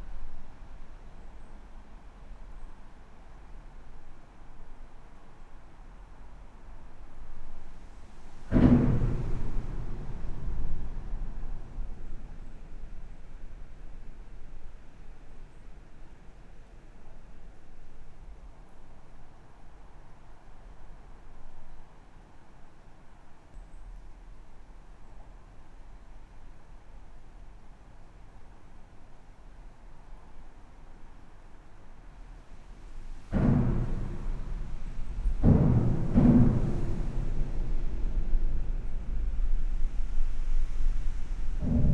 Dinant, Belgium - Charlemagne bridge
Recording of the Charlemagne bridge from the outside. The impact noises come from the seals.
29 September 2017, ~10:00